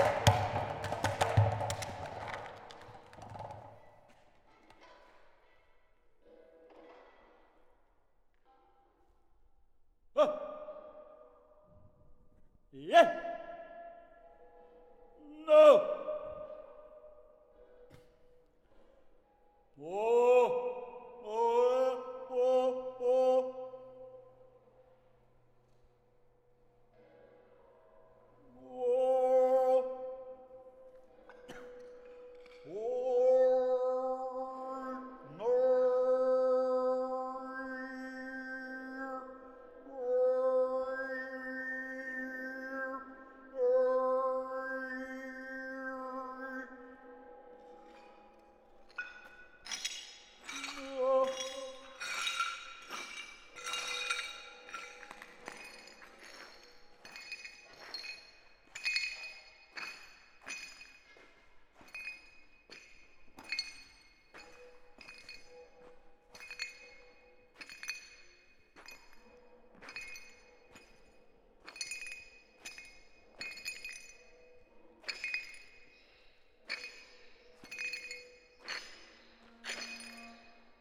Jeux acoustiques dans une usine désaffectée